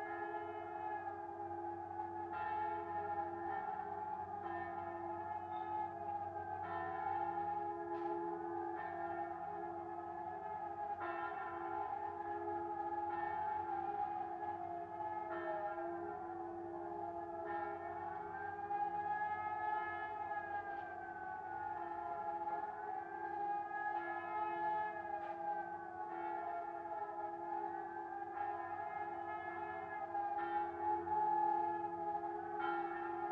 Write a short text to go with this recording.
clash of sounds, church bells tolling, my washing machine beeps the end of a spin, low flying propeller aircraft, and the siren test, recorded on a Zoom H5